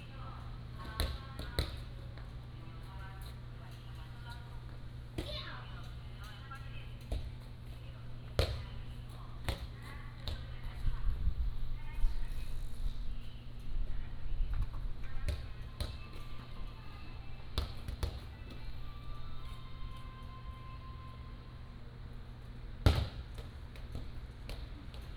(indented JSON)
{
  "title": "天后宮, Magong City - in front of the temple",
  "date": "2014-10-22 18:14:00",
  "description": "In the square, Traffic Sound, In front of the temple",
  "latitude": "23.56",
  "longitude": "119.56",
  "altitude": "12",
  "timezone": "Asia/Taipei"
}